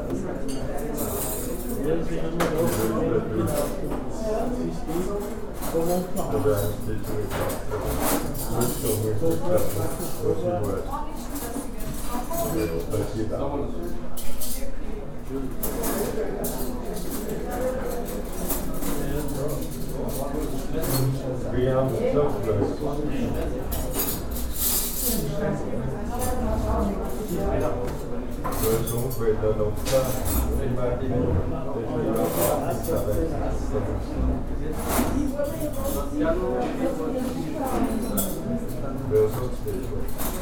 Linz, Österreich - gasthaus lindbauer
gasthaus lindbauer, linke brückenstr. 2, 4040 linz